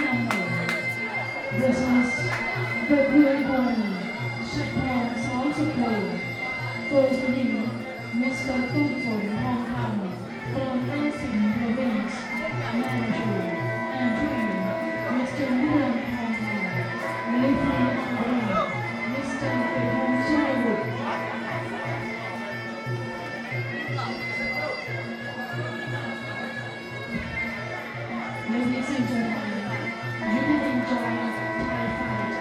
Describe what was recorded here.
Muay Thai fighting part 2 in CM Boxing Stadium